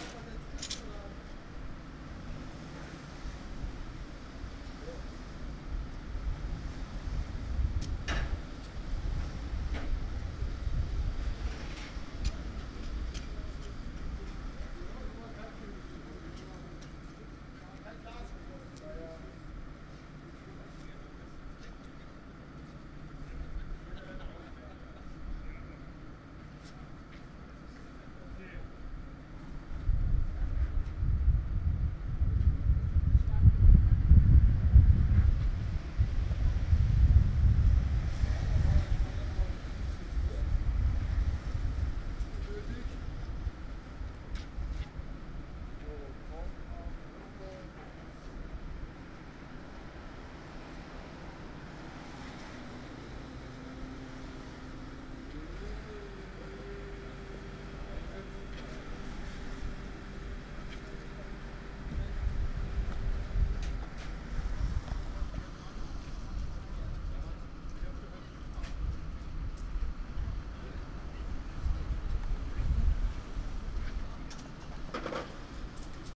Flughafen Berlin-Tegel, Flughafen Tegel, Berlin, Deutschland - airport check in
queue for check in at Tegel Airport. A perfect choir piece, in fact.
Berlin, Germany